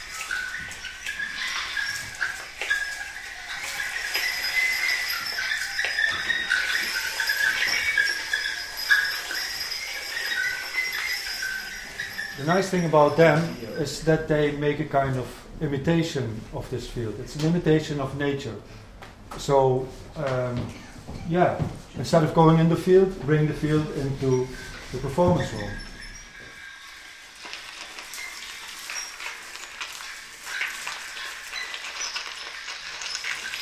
between natura morta and laterna magica - between natura morta and lanterna magica
April 12th, 9pm TOTAL artspace, Lenaustr.5
Seiji Morimoto & Francesco Cavaliere
expect a different setting
in fact the space will be dressed up a bit in order to achieve
something ... between natura morta and lanterna magica.
Berlin, Germany